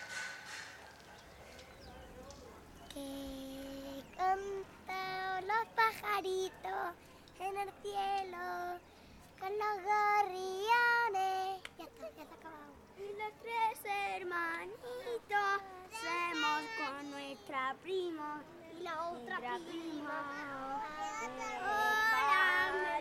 C. Morales, Cogollos de la Vega, Granada, Espagne - Cogollos Vega - Andalousie - été 2015
Cogollos Vega - Andalousie
Ambiance estivale août 2015